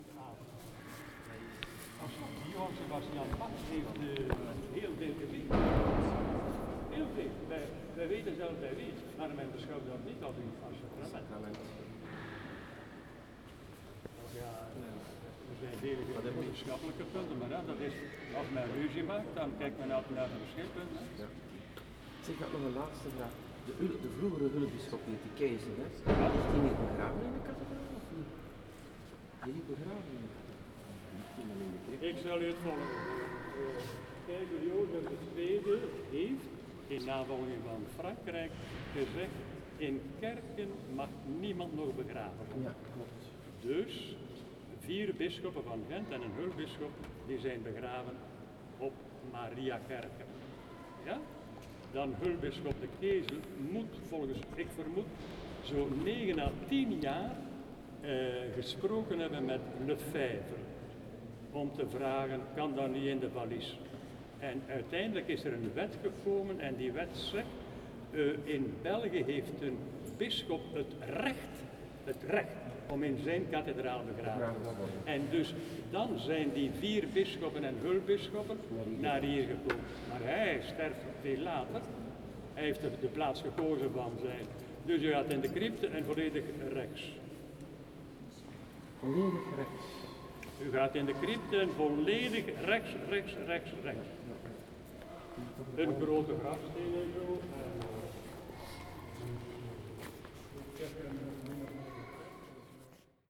Sint-Baafsplein, Gent, Belgium - gids talk
the explanation of the gids about the graves in the cript of the Sint-Baafsplein cathedral
1 October 2018